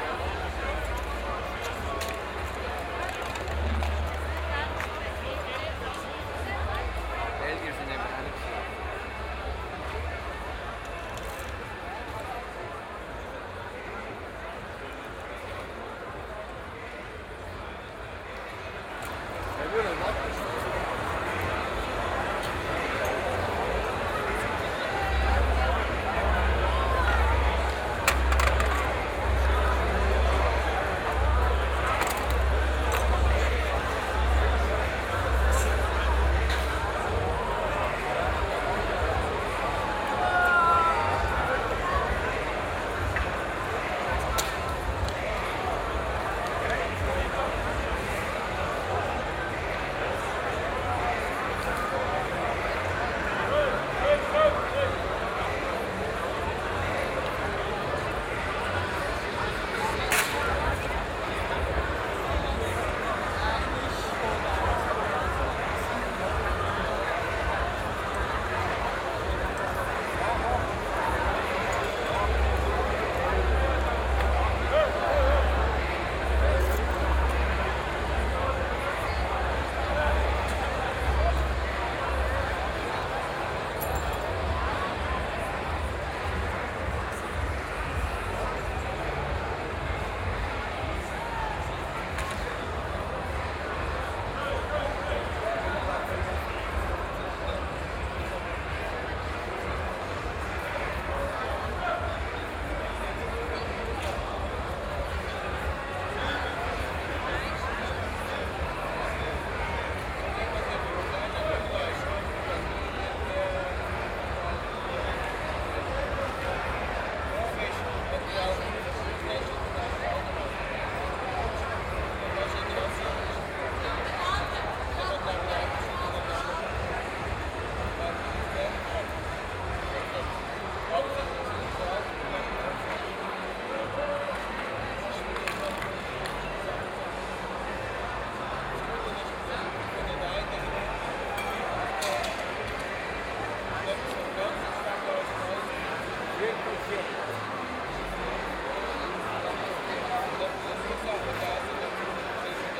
Aarau, Laurenztorgasse, Night before Maienzug, Schweiz - Party atmosphere Aarau
The streets of Aarau are full of people, plastic cups and tin cans are lying on the cobble stones, people once and again kicking them, the night before Maienzug is a large party, well known from other places...
1 July 2016, 00:30